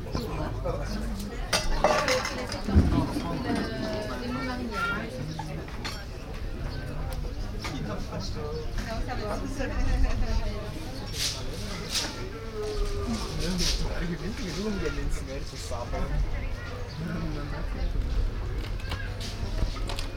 audresseles, markttag, musiken
mittags auf dem markt, stimmen von passanten, plötzliches einsetzen der beschallungsanlage eines musikstandes - musik cuts original
fieldrecordings international:
social ambiences, topographic fieldrecordings